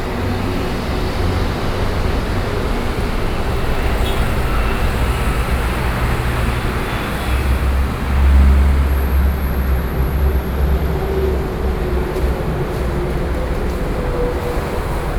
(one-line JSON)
{"title": "Neihu, Taipei - Under the highway viaduct", "date": "2012-07-16 09:23:00", "latitude": "25.07", "longitude": "121.62", "altitude": "16", "timezone": "Asia/Taipei"}